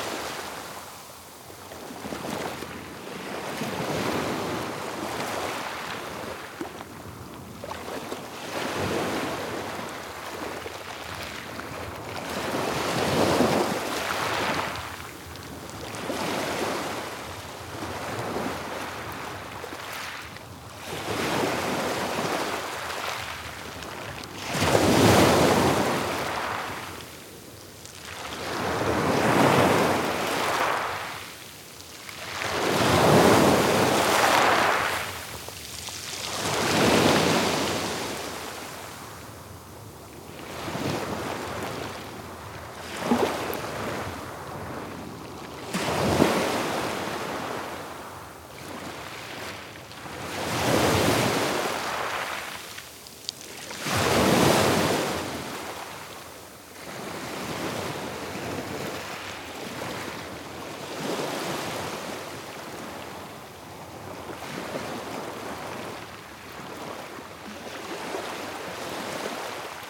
La Rochelle, France - Galets de lHoumeau
Gros plan Plage de Galets L'Houmeau
Sac & ressac
couple ORTF DPA 4022 + Rycotte + AETA
L'Houmeau, France, 6 June 2015